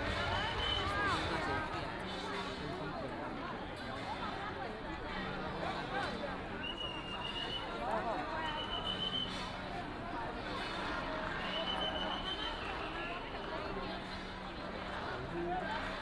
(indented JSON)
{
  "title": "白沙屯, 苗栗縣通霄鎮 - Matsu Pilgrimage Procession",
  "date": "2017-03-09 12:41:00",
  "description": "Matsu Pilgrimage Procession, Crowded crowd, Fireworks and firecrackers sound",
  "latitude": "24.56",
  "longitude": "120.71",
  "altitude": "10",
  "timezone": "Asia/Taipei"
}